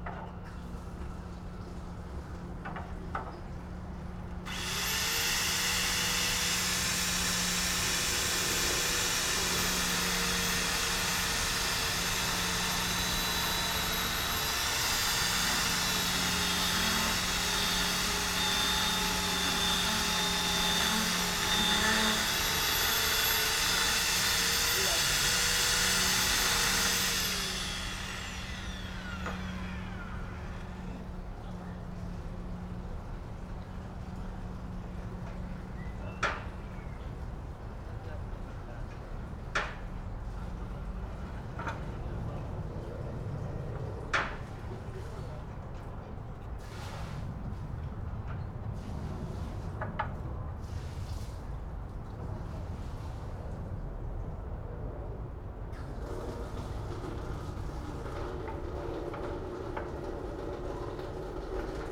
street ambience, workers on the roof of a house, sound of the nearby bridge